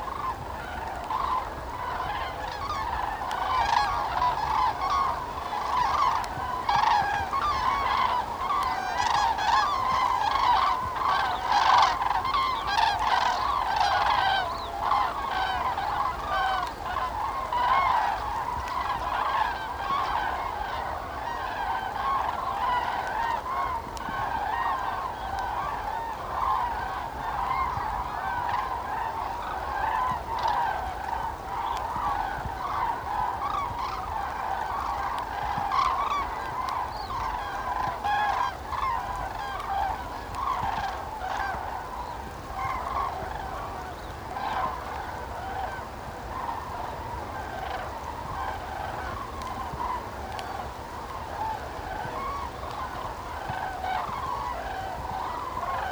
During their autumn migration northern Europe's cranes gather in hundreds of thousands at Linum to feed up before continuing their journey southwards. They are an amazing sight. Punctually, at dusk, flocks of up to 50 birds pass overhead in ever evolving formations trumpeting as they go. The Berlin/Hamburg motorway is a kilometer away and Tegel airport nearby. The weather on this evening was rainy and yellowing poplar leaves were hissing in the wind. These are the sound sources for this track, which is 3 consecutive recording edited together.